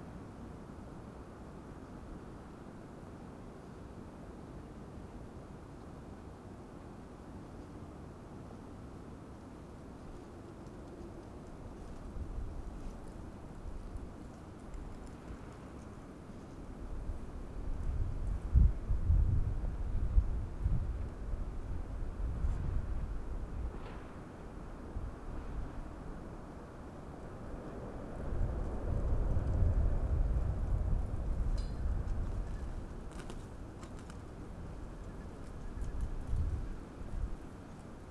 {
  "title": "Berlin: Vermessungspunkt Friedelstraße / Maybachufer - Klangvermessung Kreuzkölln ::: 08.04.2011 ::: 04:25",
  "date": "2011-04-08 04:25:00",
  "latitude": "52.49",
  "longitude": "13.43",
  "altitude": "39",
  "timezone": "Europe/Berlin"
}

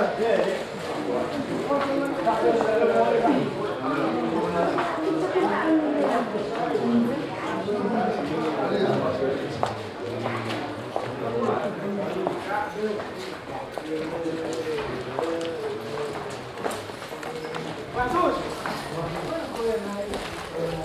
{
  "title": "Souks, Tunis, Tunesien - tunis, medina, souks, soundwalk 02",
  "date": "2012-05-02 10:30:00",
  "description": "Walking inside the crowded Souks. Passing by different kind of shops, some music coming from the shops, traders calling at people, voices and movements. No chance to stop without being dragged into a store.\ninternational city scapes - social ambiences and topographic field recordings",
  "latitude": "36.80",
  "longitude": "10.17",
  "altitude": "19",
  "timezone": "Africa/Tunis"
}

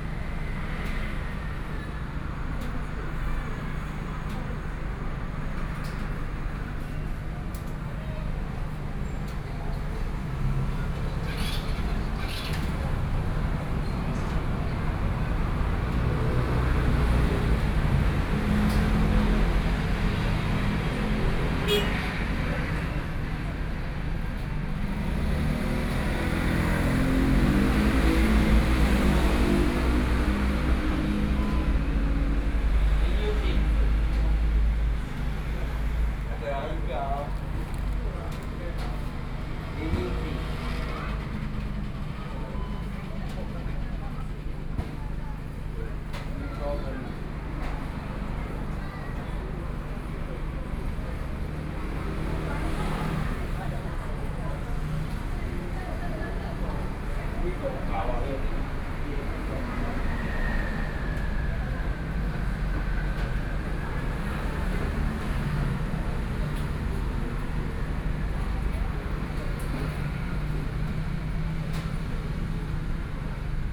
{
  "title": "Changsha St., Taitung City - In front of the convenience store",
  "date": "2014-09-05 20:19:00",
  "description": "In front of the convenience store, Traffic Sound",
  "latitude": "22.75",
  "longitude": "121.14",
  "altitude": "15",
  "timezone": "Asia/Taipei"
}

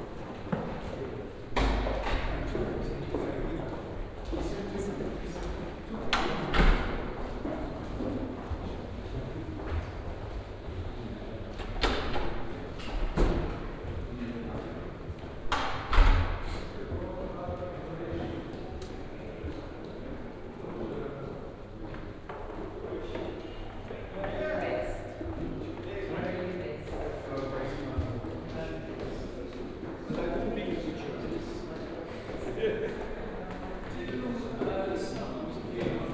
{
  "title": "Leipzig, Mediencampus - coffee break",
  "date": "2012-01-28 11:10:00",
  "description": "Mediencampus Leipzig, thinktank about the radio feature in the digital age, coffee break, hall ambience\n(tech: Olympus LS5, OKM, binaural)",
  "latitude": "51.36",
  "longitude": "12.36",
  "altitude": "110",
  "timezone": "Europe/Berlin"
}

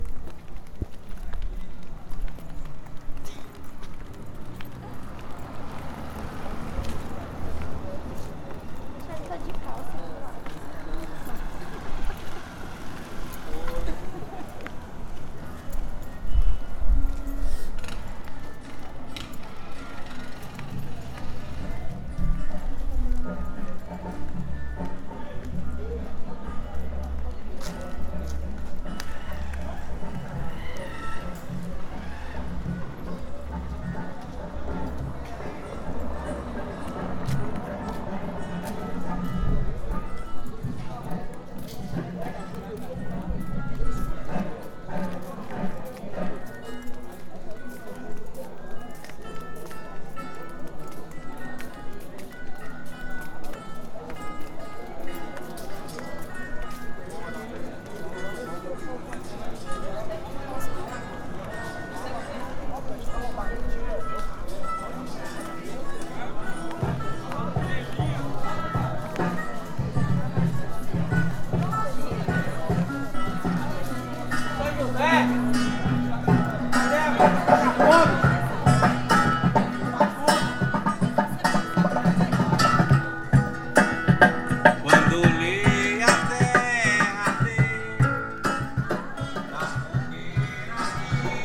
{
  "title": "Cachoeira, BA, Brasil - Quinta do Preto Velho",
  "date": "2014-03-27 22:38:00",
  "description": "Audio da rua 25 de junho, rua cheia de bares, gravado durante uma pequena caminhada pela mesma. No momento da gravação estava rolando música ao vivo em um dos bares. Captado para a disciplina de Sonorização ministrada por Marina Mapurunga na UFRB.\nAudio captado utilizando um Tascam DR-100",
  "latitude": "-12.60",
  "longitude": "-38.96",
  "altitude": "9",
  "timezone": "America/Bahia"
}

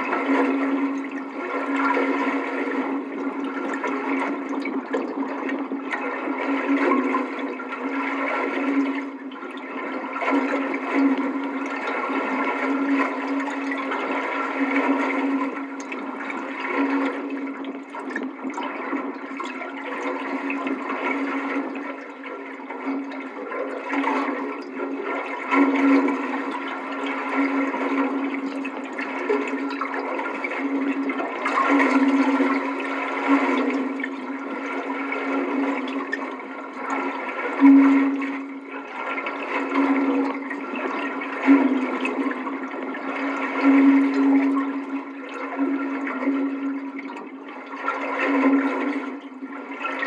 {
  "title": "Christian Renewal Centre, Shore Rd, Rostrevor, Newry, UK - High Tide Stairs to the Sea",
  "date": "2021-06-10 12:52:00",
  "description": "Recorded with a stereo pair of JrF contact mics taped to metal sea stairs into a Sound Devices MixPre-3.",
  "latitude": "54.10",
  "longitude": "-6.20",
  "altitude": "6",
  "timezone": "Europe/London"
}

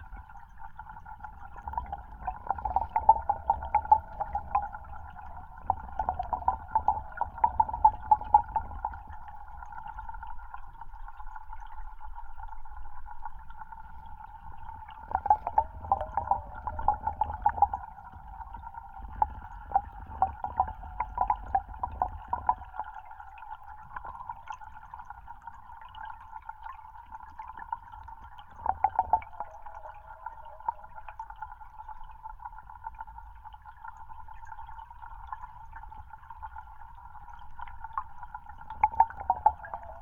{
  "title": "Kintai, Lithuania, hydrophone in port",
  "date": "2022-07-20 21:25:00",
  "description": "Another underwater recording for \"Kintai. Kitaip\" art project/residence",
  "latitude": "55.42",
  "longitude": "21.25",
  "timezone": "Europe/Vilnius"
}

